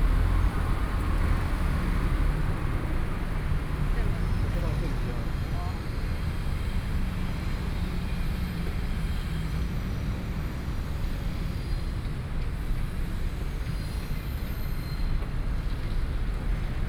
Train traveling through, From the underpass towards the oppositeSony, PCM D50 + Soundman OKM II